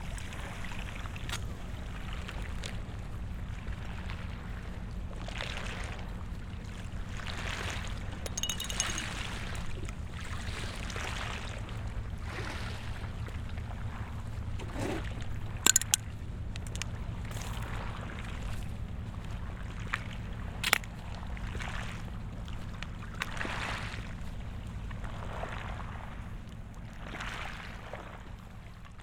Punto Franco Vecchio, Molo, Trieste, Italy - walk at waterfront
walk on the spur outside abandoned buildings at Molo 0, old harbour Punto Franco Nord, Trieste.(SD702, AT BP4025)